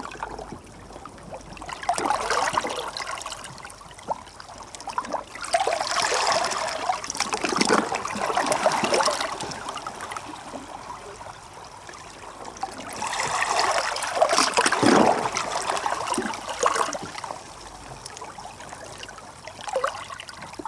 Captured with a Sound Devices MixPre-3 and a stereo pair of DPA4060s.
11 June 2019, 23:15